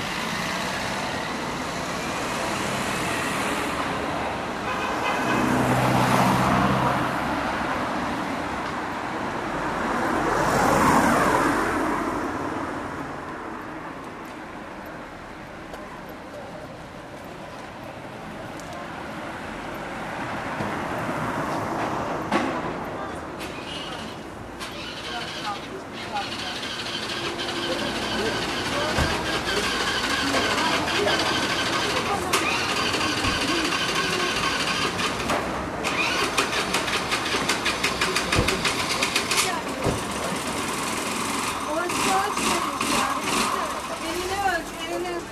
Fullmoon Nachtspaziergang Part II
Fullmoon on Istanbul, walking uphill through Fulya.